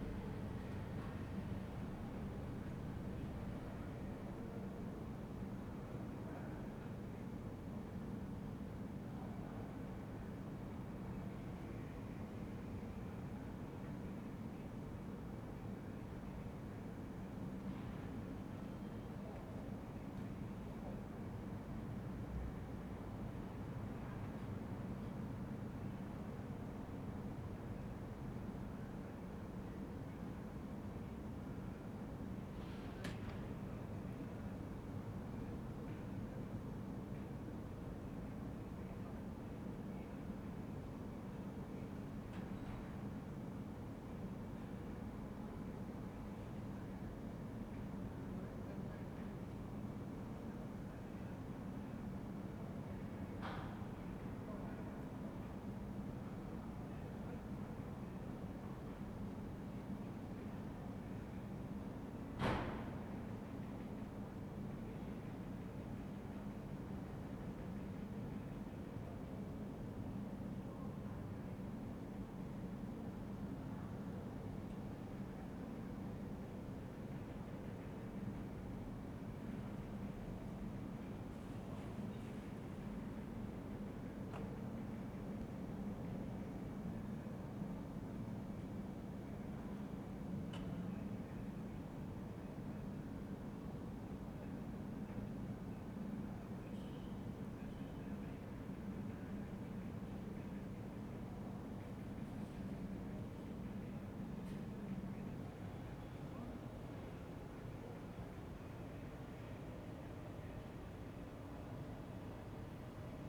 "Terrace at sunset in the time of COVID19, one year after" Soundscape
Chapter CLXII of Ascolto il tuo cuore, città. I listen to your heart, city
Wednesday, March 17th 202I. Fixed position on an internal terrace at San Salvario district Turin, 1 year and 1 week after first lockdown due to the epidemic of COVID19.
Start at 6:45 p.m. end at 7:13 p.m. duration of recording 27'45''